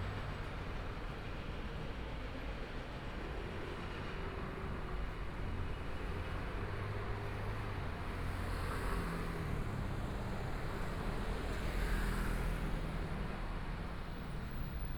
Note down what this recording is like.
Walking across the different streets, Environmental sounds, Traffic Sound, Motorcycle Sound, Pedestrian, Clammy cloudy, Binaural recordings, Zoom H4n+ Soundman OKM II